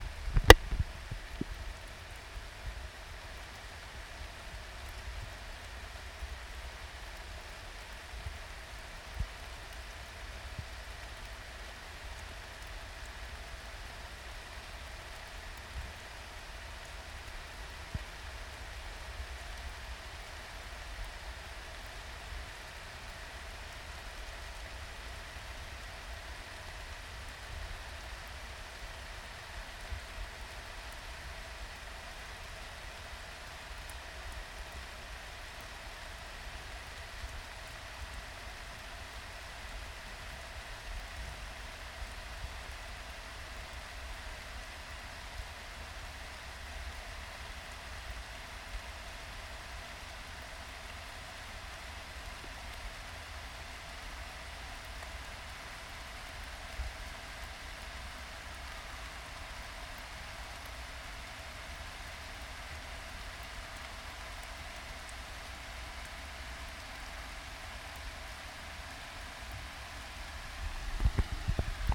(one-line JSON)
{"title": "Heaton Park, Newcastle upon Tyne, UK - Beech Trees in Heaton Park", "date": "2019-10-13 15:35:00", "description": "Walking Festival of Sound\n13 October 2019\nHollow Beech tree. Rain", "latitude": "54.98", "longitude": "-1.59", "altitude": "31", "timezone": "Europe/London"}